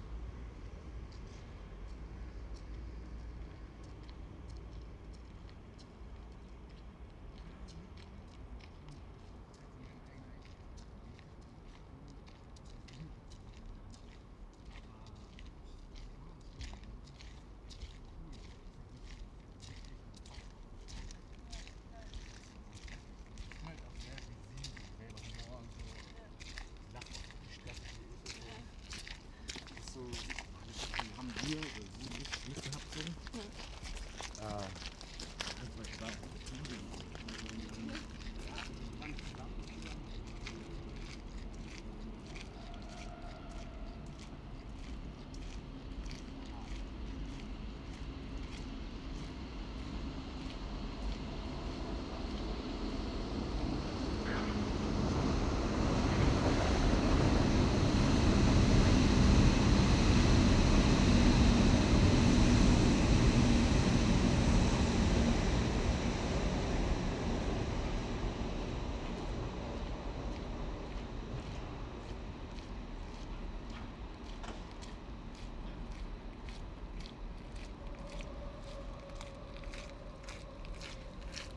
Fußgängerbrücke an der Sonnenburger Straße, Unnamed Road, Berlin, Deutschland - S-bahn bridge
Listening to the city In the middle of the small S-Bahn pedestrian bridge late at night.